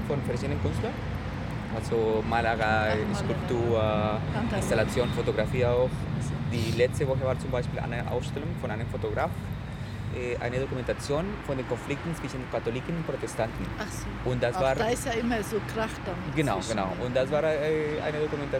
Wollankstraße, Soldiner Kiez, Wedding, Berlin, Deutschland - Wollankstraße 63, Berlin - Speaking to local residents
Im Gespräch mit zwei Anwohnerinnen.
10 November 2012, 11:38